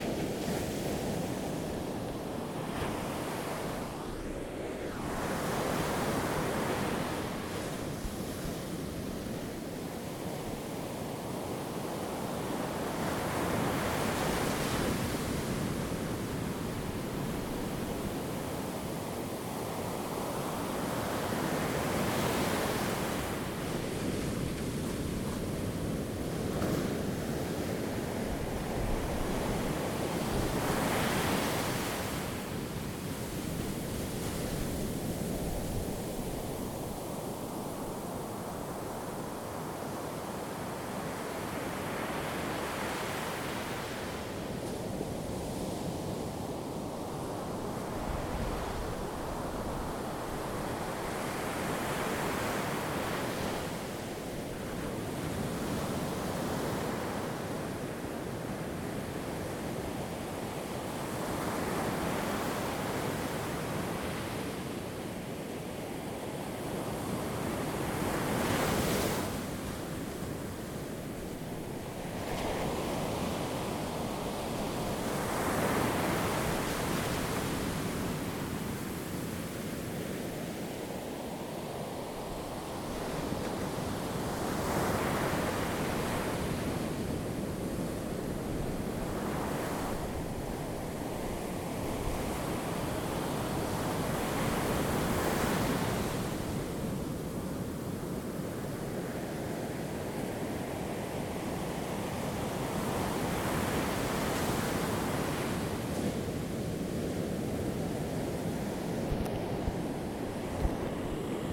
Komos Beach, Festos, Greece - Waves on Komos
During a windy night, the sound of the waves was captured. Due to the length of the beach there is a slight reverbation, as you can listen to the wave reaching the shore in tdifferent timings.
13 August 2017, ~02:00